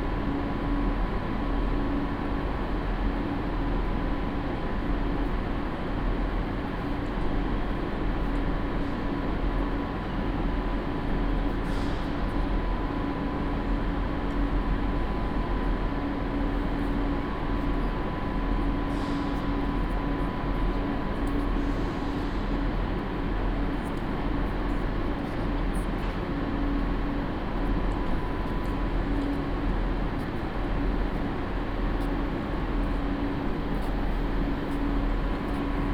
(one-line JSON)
{"title": "Dresden Hauptbahnhof: Germany - waiting for IC from Prague", "date": "2011-12-07 19:30:00", "description": "station ambience from elevated track 17, IC/ICE trains arrive and depart here. my train is 45min late.", "latitude": "51.04", "longitude": "13.73", "altitude": "123", "timezone": "Europe/Berlin"}